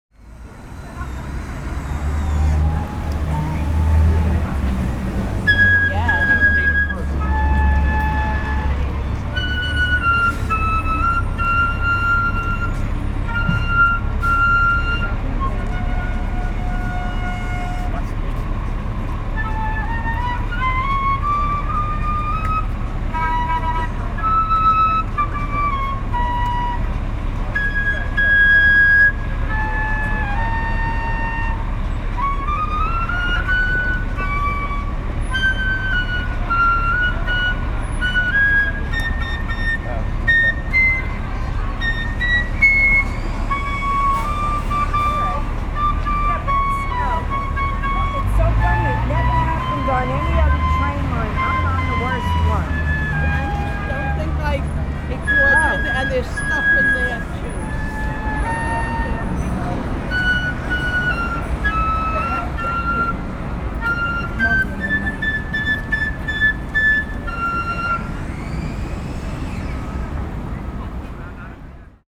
Worlds Worst Busker - The Mall, Central Park, New York, USA
A penny whistle player trying his luck in Central Park. Recorded with a Sound Devices Mix Pre 3 and 2 Beyer lavaliers.